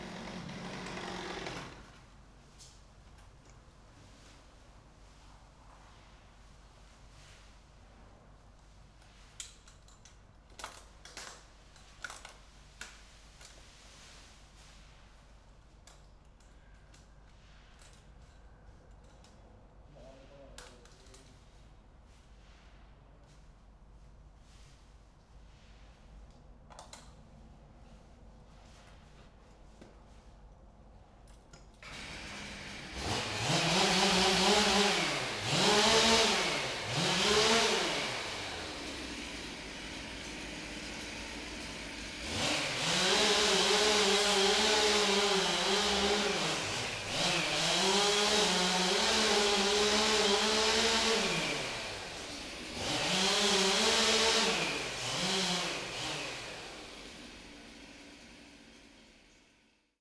Berlin Bürknerstr., backyard window - workers, chain saw
workers cutting branches from a tree
(Sony PCM D50)